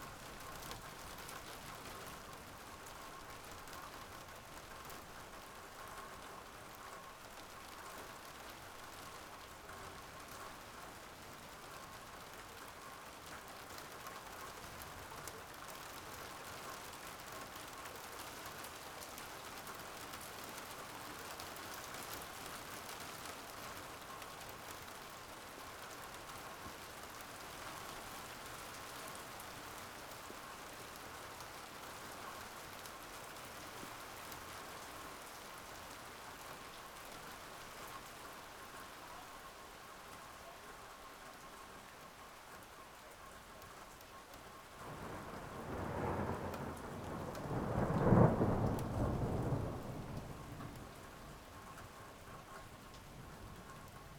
Berlin Bürknerstr., backyard window - light ice rain, thunder